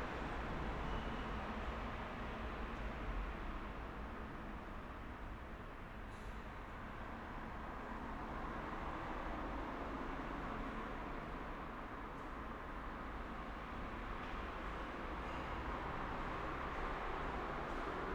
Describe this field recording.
waiting room ambience at Studenci station, later a train engine starts. (SD702 AT BP4025)